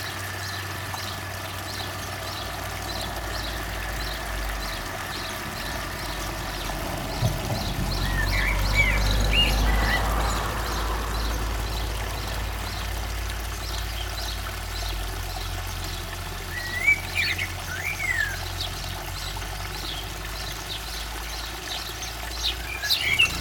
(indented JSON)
{
  "title": "Greystoke, Cumbria, UK - Johnby spring morning",
  "date": "2013-06-06 08:15:00",
  "description": "I woke up to hear the lovely sound of birds outside in the trees. I opened the window, and then the sound of those birds mixed with the din of the fountain in the garden mingled together while I stood and listened. There was a pheasant too. Naiant X-X microphones with little windjammer furries, and Fostex FR-2LE",
  "latitude": "54.69",
  "longitude": "-2.88",
  "altitude": "253",
  "timezone": "Europe/London"
}